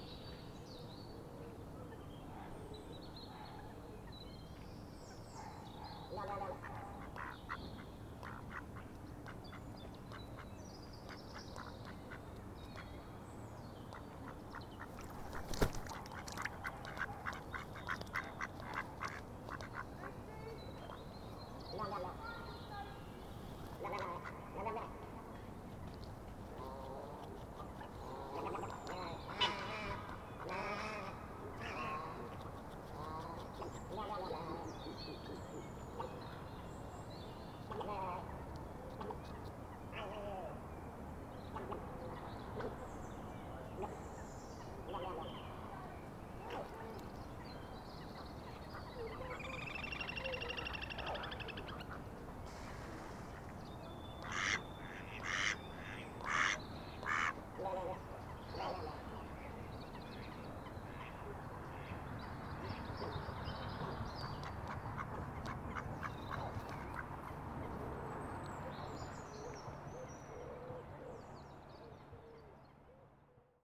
Atlantic Pond, Ballintemple, Cork, Ireland - Duck Dispute
I placed my recorder on the edge of the pond, facing the Island, and sat far enough away from it that the birds wouldn't be discouraged by my presence. The reverb is lovely on this side of the pond. There's some nice Moorhen and Egret sounds. A group of ducks had a spectacular fight. Two drakes hung around right next to the recorder afterwards and I was holding my breath hoping they wouldn't knock it in to the pond (which to my relief they didn't).
Recorded with a Roland R-07.
County Cork, Munster, Ireland, 27 April 2020